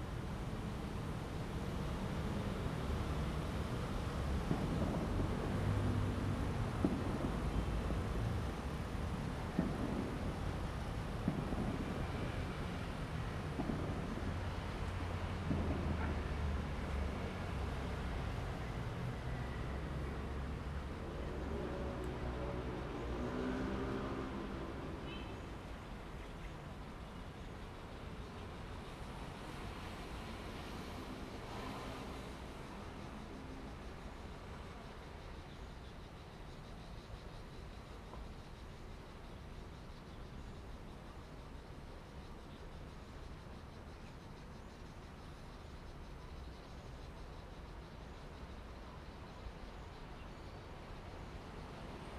同心園, 苗栗市 Miaoli City - Next to the tracks
The train runs through, Next to the tracks, Fireworks sound, Bird call, Dog sounds
Zoom H2n MS+XY
Miaoli County, Taiwan, 26 March, 16:33